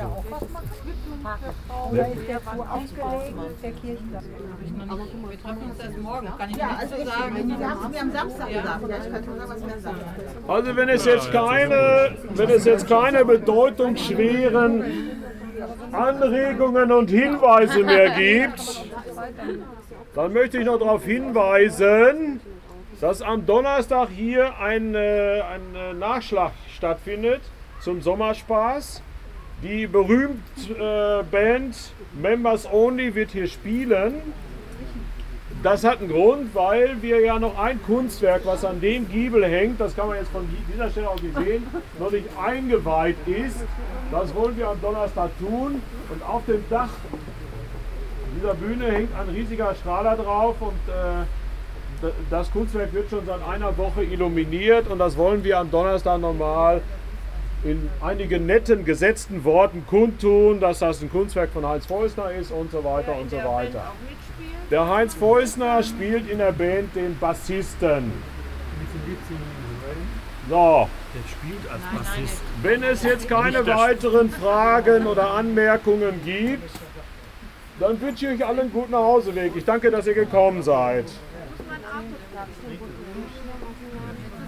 Martin-Luther-Platz, Hamm, Germany - Rundgang durchs Lutherviertel (7)
Letzte Station des Rundgangs. Ende der Vereins"Sitzung".
last take of the guided tour. end of the meeting.
more infos:
recordings are archived at:
18 August